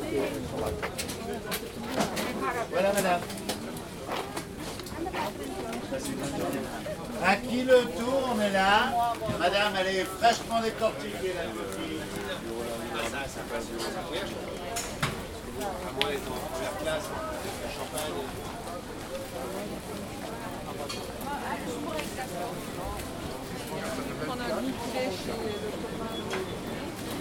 Av. de Saxe, Paris, France - Passing through the market rue de Saxe, Paris
Walking through the market, rue de Saxe, Paris, Saturday morning